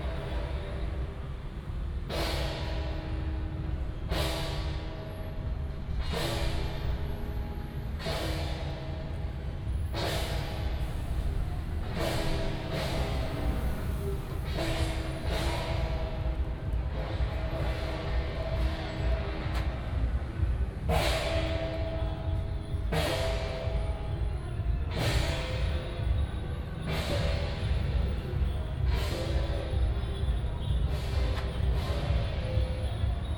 Shuidui St., Tamsui Dist. - Temple fair
temple fair, Fireworks and firecrackers